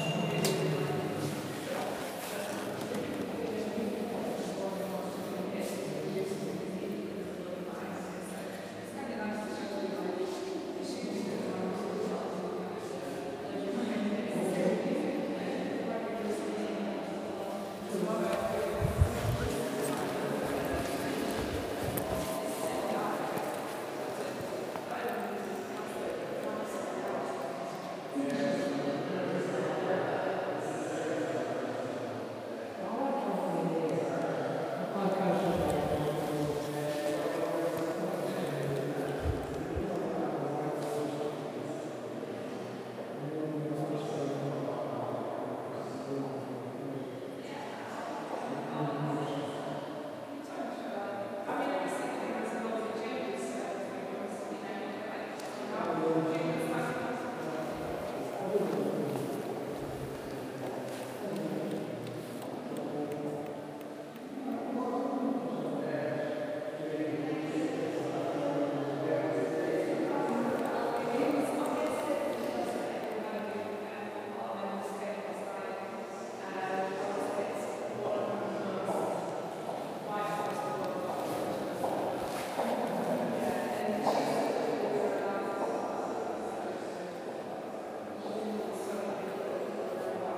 A blurry conversation in the extremely reverberant Hepworth Wakefield museum.
(zoom H4n)